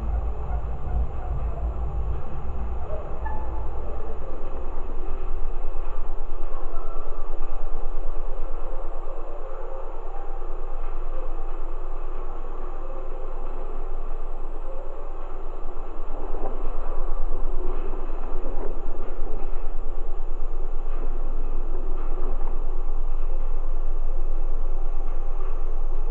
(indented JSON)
{
  "title": "Antalgė, Lithuania, sculpture Travelling Pot Making Machine",
  "date": "2020-07-24 16:30:00",
  "description": "Open air sculpture park in Antalge village. There is a large exposition of metal sculptures and instaliations. Now you can visit and listen art. Multichannel recording using geophone, contact mics, elecytomagnetic antenna Ether.",
  "latitude": "55.48",
  "longitude": "25.49",
  "altitude": "164",
  "timezone": "Europe/Vilnius"
}